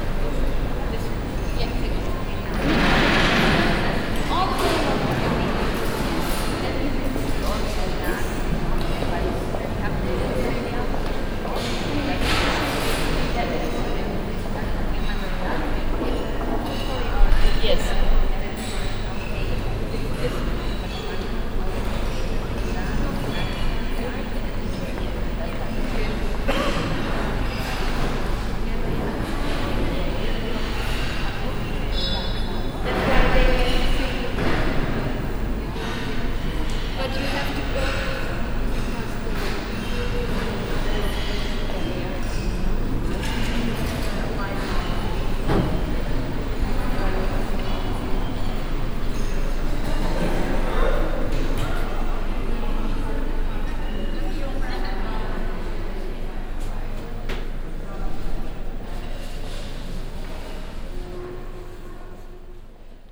atmosphere recording of the foyer of the zkm (center for art and media technology)in karlsruhe - an info center and open space cafe area with accompanied book shop
soundmap d - topographic field recordings und social ambiences
4 June 2010, 10:47